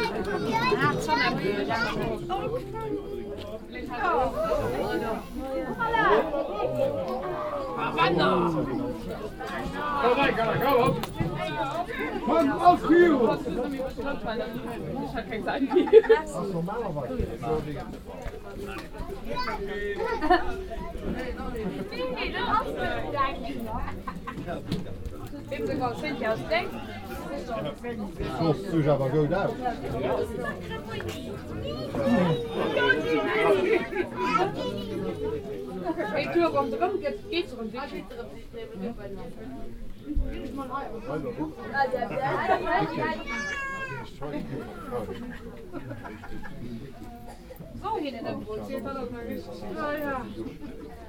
hosingen, soccer field
At the villages soccer field during the second half of a game with two local football teams.. The sound of the referee pipe, ball attacks, a foul and conversations of the local fans and visitors.
Hosingen, Fußballfeld
Beim Fußballfeld des Ortes während der zweiten Halbzeit eines Spiels mit zwei regionalen Fußballmannschaften. Das Geräusch der Pfeife des Schiedsrichters, Ballangriffe, ein Foul begleitet vom Unterhaltungen und Kommentaren der lokalen Fans und Zuschauer.
Hosingen, terrain de football
Sur le terrain de football du village durant la seconde mi-temps d’un match entre deux équipes locales. On entend le sifflet de l’arbitre, des attaques de balles, une faute et les conversations des supporters locaux et des visiteur
Hosingen, Luxembourg